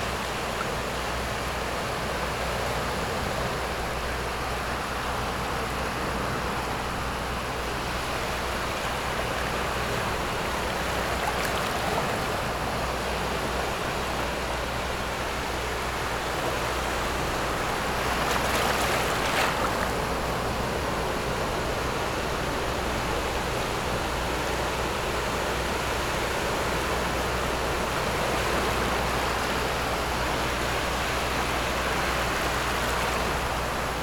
頭城鎮外澳里, Yilan County - In the beach
In the beach, There are boats on the distant sea, Hot weather, sound of the waves
Zoom H6 MS+ Rode NT4
Yilan County, Taiwan, 29 July